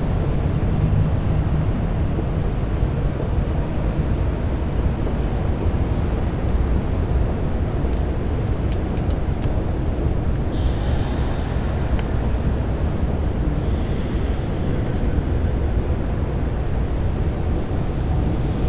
Sao Paulo, Cathedral Praca da Sé, doors open at three sides so the sounds from the streets come in